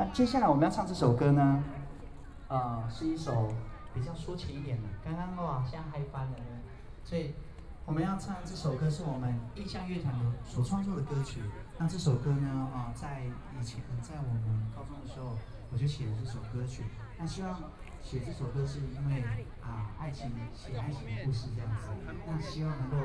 Arts Park - Taipei EXPO Park - Walking through the park
Walking through the park, Environmental sounds, Traffic Sound, Aircraft flying through, Tourist, Clammy cloudy, Binaural recordings, Zoom H4n+ Soundman OKM II
Zhongshan District, Taipei City, Taiwan, 10 February 2014